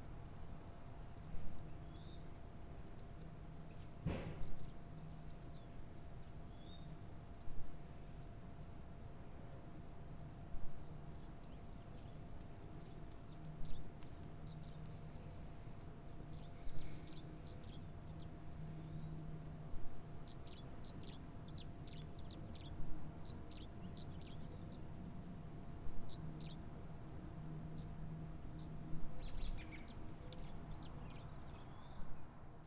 neoscenes: birds on the porch
CO, USA